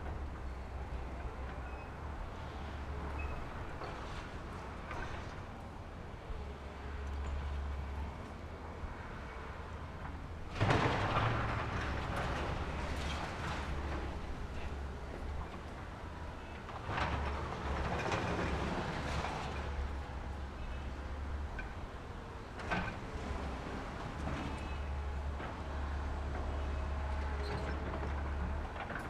wind and the tree, Small pier, The distance the sound of house demolition
Zoom H6 + Rode NT4